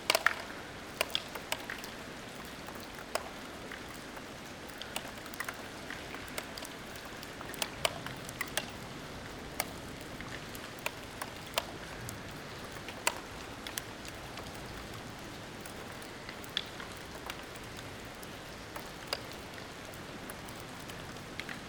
Herserange, France - Rain symphony - VII - Allegro
This is a one hour sound of the rain onto the gigantic roofs of an abandoned factory. This warehouse is the Herserange wire drawing plant, located in Lorraine, France. It has been in a state of abandonment for 20 years. In 1965, Longwy area was the lifeblood of 26,000 steelmakers. Today, absolutely everything is dead. Areas are devastated, gloomy and morbid.
Fortunately, I had the opportunity to make a poetic visit, since I had the rare and precious opportunity to record the rain in all its forms. The gigantic hangar offers a very large subject, with many roof waterproofing defects.
I made two albums of this place : a one-hour continuity of rain sound (the concerto) and a one-hour compilation of various rain sounds (the symphony). Here is the sound of the symphony.
VII - Allegro